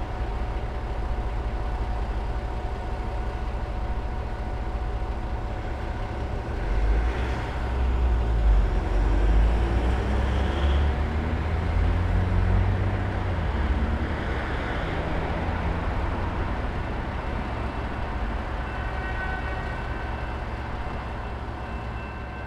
neoscenes: painting the street at night